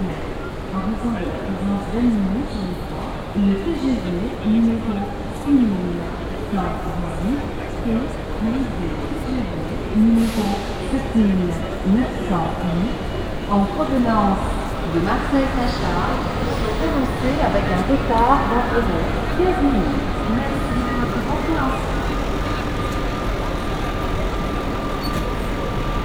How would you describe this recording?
atmosphere at the station main hall, an announcement, cityscapes international: socail ambiences and topographic field recordings